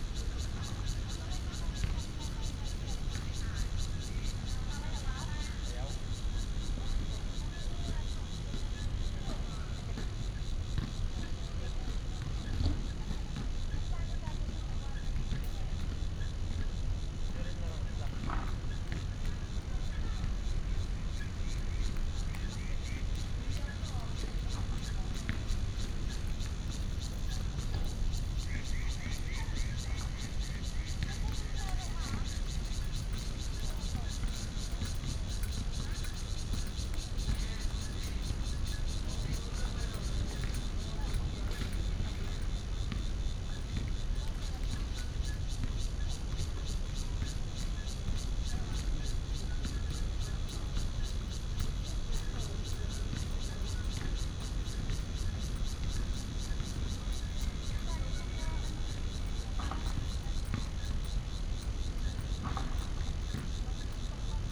元生公園, Zhongli Dist., Taoyuan City - in the Park
in the Park, Cicada cry, traffic sound
Taoyuan City, Taiwan, 18 August 2017, 18:02